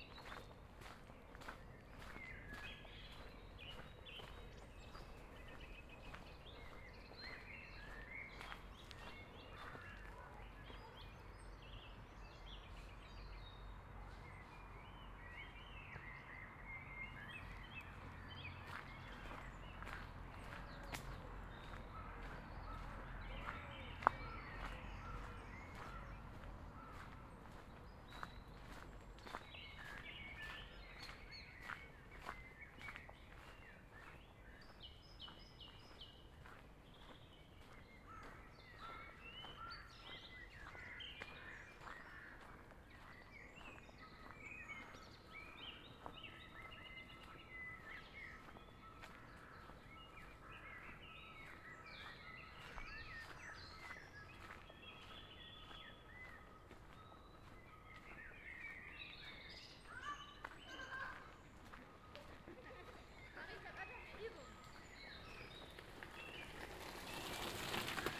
Kopperpahler Allee, Kronshagen, Deutschland - Binaural evening walk
Evening walk, mostly quiet neighborhood, some traffic noise on the street, a train passing by, lots of birds, some other pedestrians and bicycles, unavoidable steps and breathing. Sony PCM-A10 recorder, Soundman OKM II Klassik mics with furry earmuffs as wind protection.
Schleswig-Holstein, Deutschland